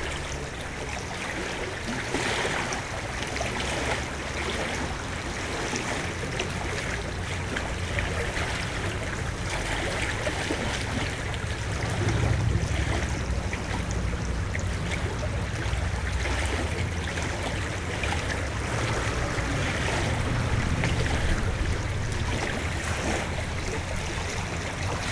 Troyes, France - Tributary stream
In Troyes, there's a lot of tributary streams, affluents and canals, nourishing the Seine river. This is here one of the alive stream, joining the Seine river : the Trevois canal.